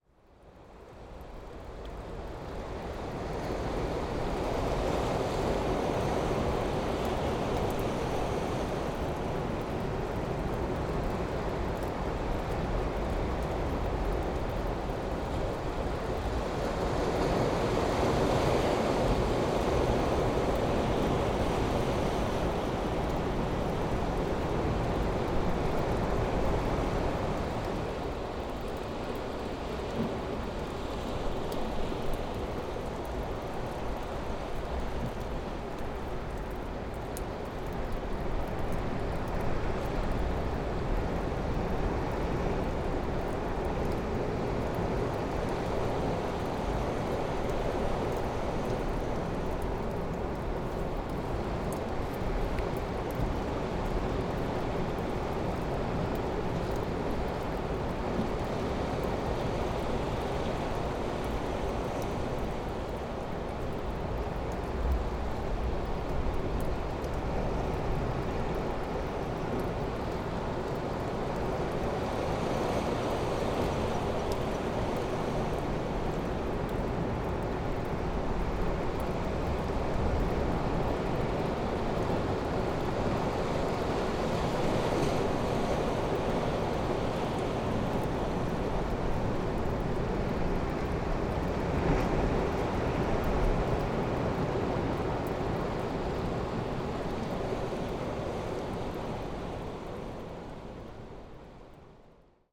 Après une averse. à l'abri d'un rocher en face de la mer.
After a downpour. sheltered from a rock in front of the sea.
April 2019.